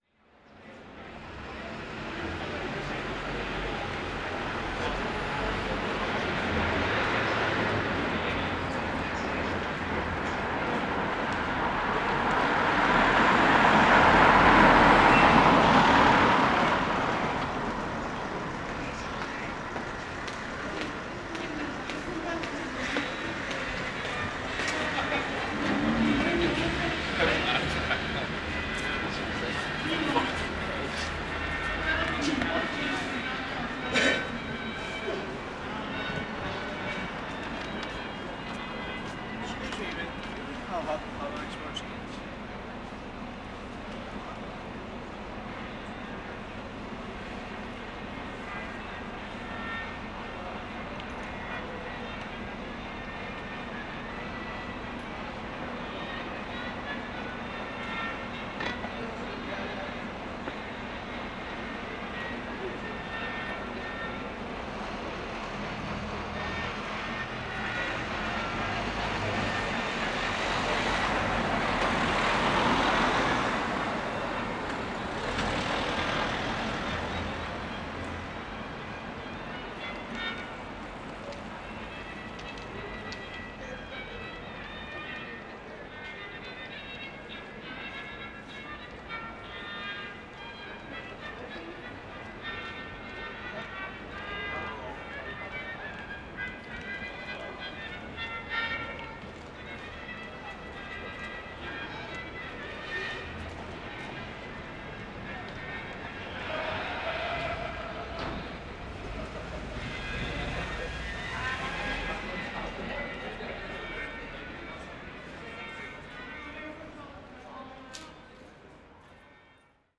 Donegall Pl, Belfast, UK - Queens Arcade
Recording in a common space of shoppers, there is a distant violin player (usually performs in this area), a homeless man asking for money, and shoppers exiting stores. This is a day before Lockdown 2 in Belfast.